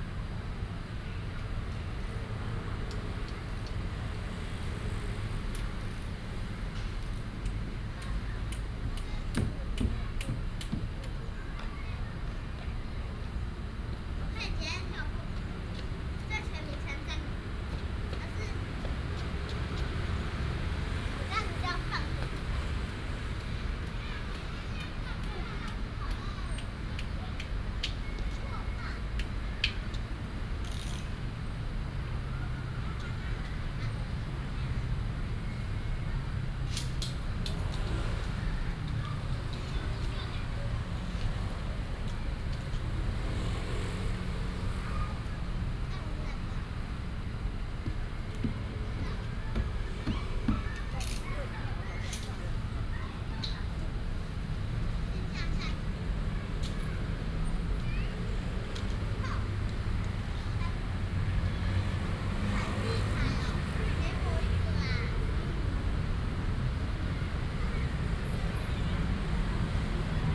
北屯公園, Beitun Dist., Taichung City - Walking in the Park
Walking in the Park, traffic sound, Childrens play area, Binaural recordings, Sony PCM D100+ Soundman OKM II
1 November 2017, ~18:00